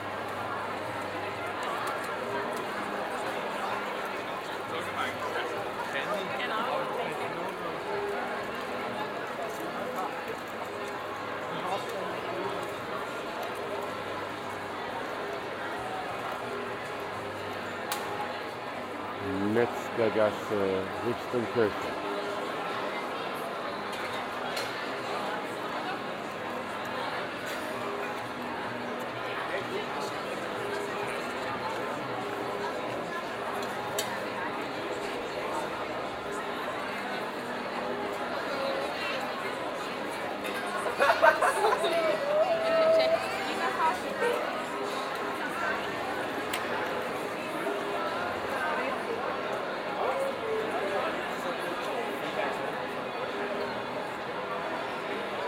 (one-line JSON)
{"title": "Aarau, Kirchplatz, Maienzug Schweiz - Maienzug Churchbells", "date": "2016-07-01 09:00:00", "description": "After the Maienzug the bells of the church are tolling for a long time.", "latitude": "47.39", "longitude": "8.04", "altitude": "381", "timezone": "Europe/Zurich"}